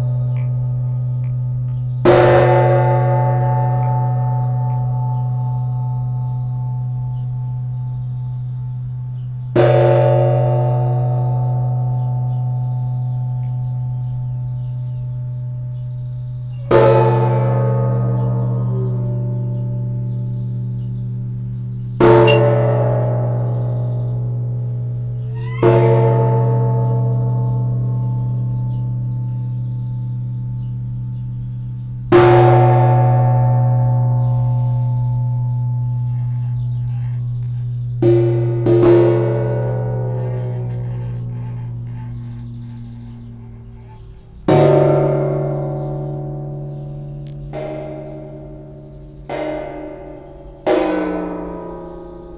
H2Orchester des Mobilen Musik Museums - Instrument Wassergong - temporärer Standort - VW Autostadt
weitere Informationen unter